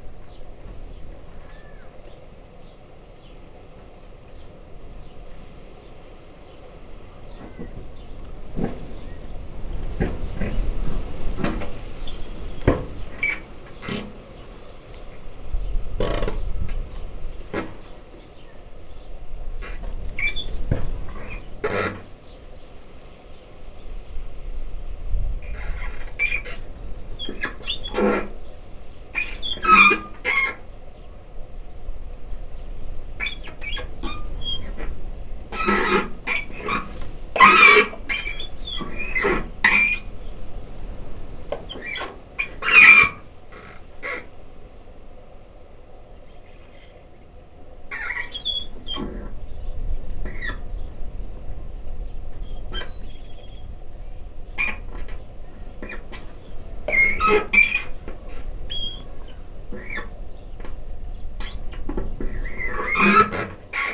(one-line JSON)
{"title": "Nowieczek, Nowieczek, Polska - gutter", "date": "2019-06-27 17:53:00", "description": "a branch scratching a metal sheet gutter on the roof. gentle hum of a water pump in the background. power saw operating. kids playing in a homestead across the street. (roland r-07)", "latitude": "52.01", "longitude": "17.11", "altitude": "85", "timezone": "Europe/Warsaw"}